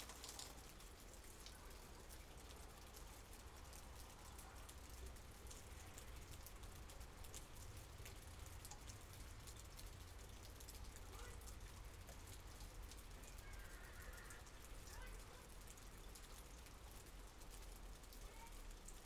Chem. des Ronferons, Merville-Franceville-Plage, France - Summer Thunderstorm
Rain, Thunderstorm and animals, Zoom F3 and two mics Rode NT55.
Normandie, France métropolitaine, France, 20 July, 13:47